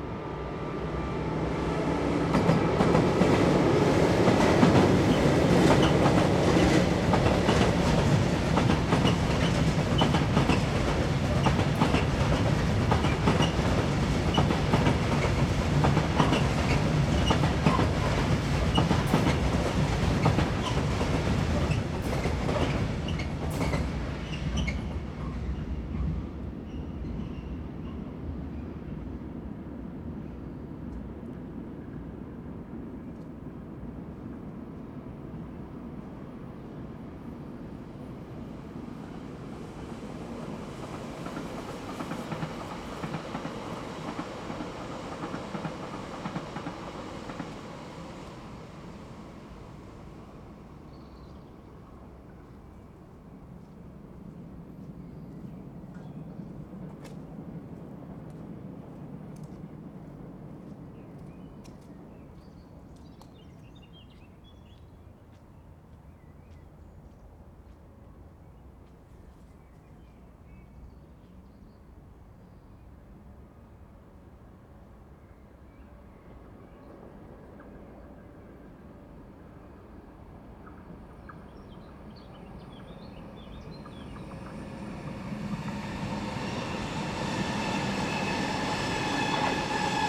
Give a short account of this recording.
pedestrian bridge, different trains, bikers, pedestrian, flies and a dog passing at different speeds on a late spring evening, nice weather.